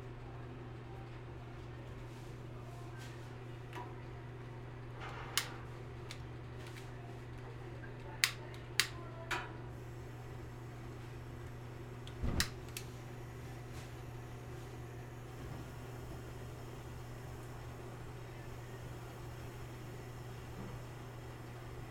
Ambient sounds from a Japanese restaurant kitchen in Midtown.